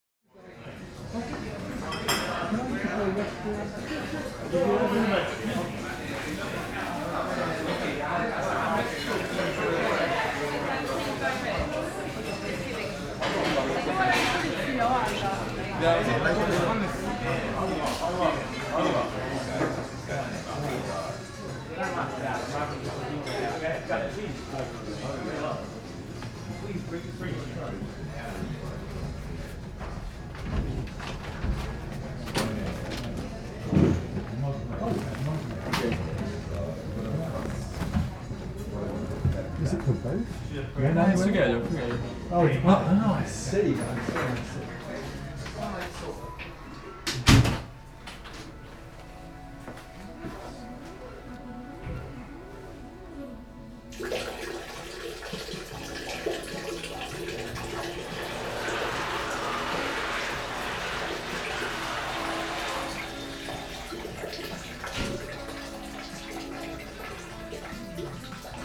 In the Bar on 7th Ave, New York, USA - A Bar on 7th Avenue
A brief glimpse into the facilities of a nameless bar on 7th Avenue somewhere south of Central Park. Mix pre 3, 2 x beyer Lavaliers.